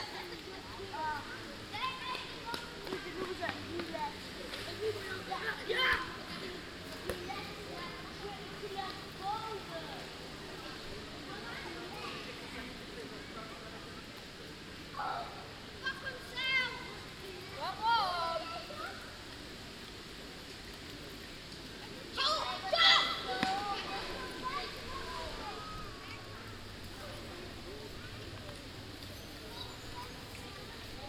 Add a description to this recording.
Kids playing on the Rabbijn Maarsenplein. A slightly windy recording but I thought it was nice anyway. The background 'white noise' are the leaves of the plane trees standing there. Binaural recording.